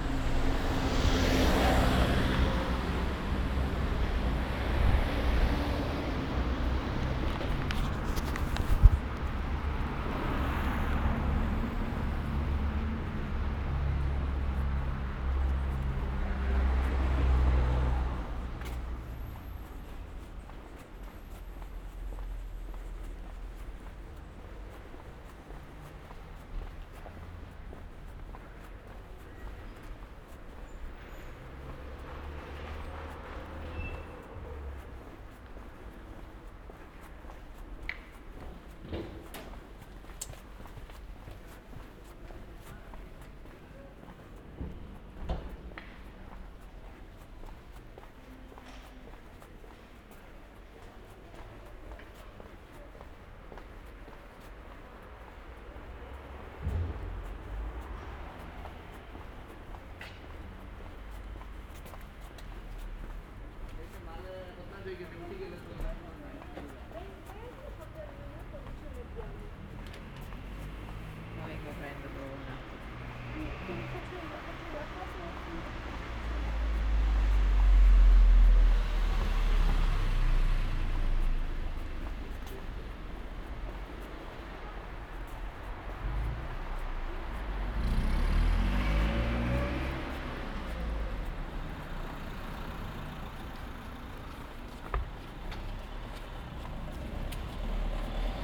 Ascolto il tuo cuore, città, I listen to your heart, city. - “Outdoor market on Saturday in the square at the time of covid19”: Soundwalk
“Outdoor market on Saturday in the square at the time of covid19”: Soundwalk
Chapter CXLIII of Ascolto il tuo cuore, città. I listen to your heart, city.
Saturday, November21th 2020. Walking in the outdoor market at Piazza Madama Cristina, district of San Salvario, two weeks of new restrictive disposition due to the epidemic of COVID19.
Start at 3:12 p.m., end at h. 3:42 p.m. duration of recording 30:19”
The entire path is associated with a synchronized GPS track recorded in the (kml, gpx, kmz) files downloadable here:
Piemonte, Italia, 21 November 2020